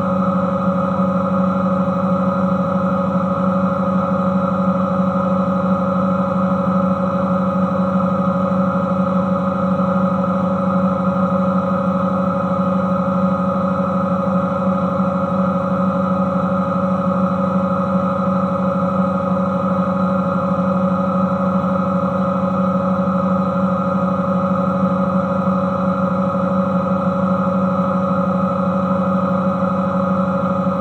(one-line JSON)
{"title": "Mont-Saint-Guibert, Belgique - The dump", "date": "2016-10-02 11:10:00", "description": "This factory is using biogas in aim to produce energy. Gas comes from the biggest dump of Belgium. Recording of a biogas generator, using contact microphone placed on a valve.", "latitude": "50.65", "longitude": "4.61", "altitude": "122", "timezone": "Europe/Brussels"}